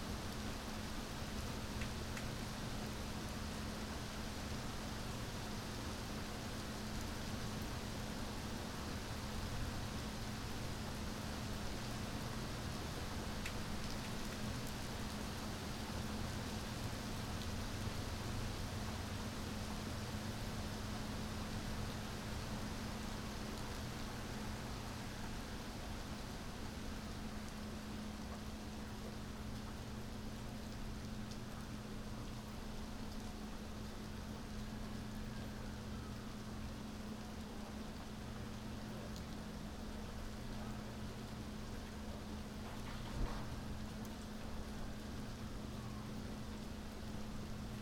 Warwick Blvd, Kansas City, MO - October 06 2018 thunderstorms